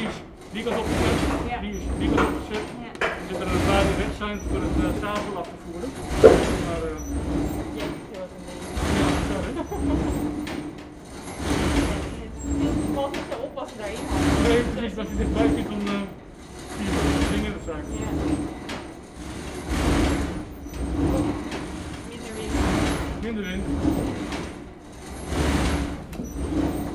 Het Jonge Schaap is the wooden wind powered sawmill, located in the Zaanse Schans, in the municipality of Zaanstad. The original mill was built in 1680 and demolished in 1942. In 2007, a replica of the mill at between the mills "De Zoeker" and "De Bonte Hen" was built. The velocity of the saw depends on the wind. It was rather mild weather.